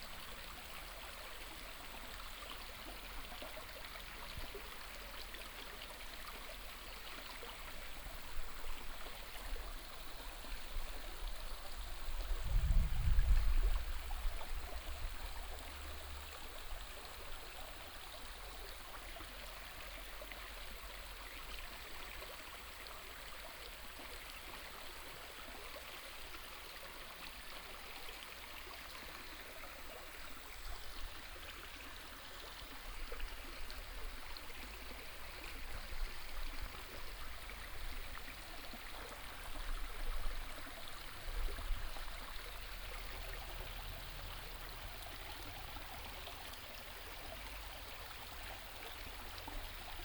中路坑溪, 埔里鎮桃米里 - Stream
Stream
Binaural recordings
Sony PCM D100+ Soundman OKM II